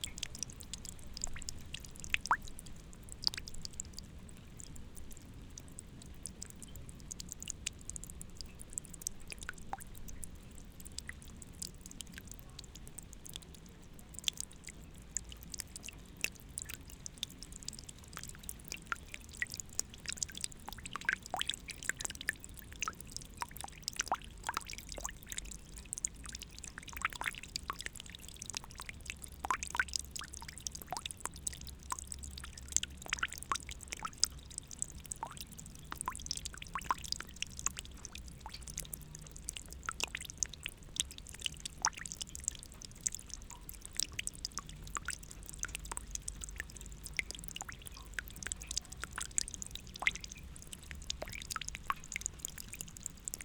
Mestni park, Slovenia - rain, drain, drops
3 August 2012, 20:05